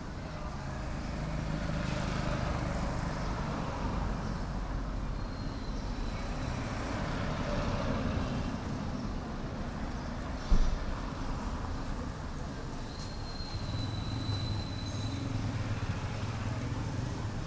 Baisha, Hainan, China - Guanyua junction on a Saturday morning
Guanyua junction on a Saturday morning. Busses criss-crossing the county–and island–interchange on the side of the street here. Recorded on a Sony PCM-M10 with build-in microphones.
Hainan Sheng, China, 22 April 2017